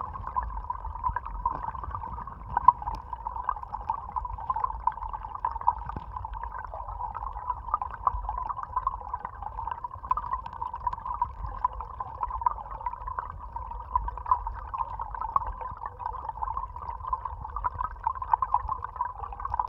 Utena, Lithuania, streamlet in a swamp
swampy place you can reach only in winter. small streamlet, hydrophone recording.
4 December 2021, ~16:00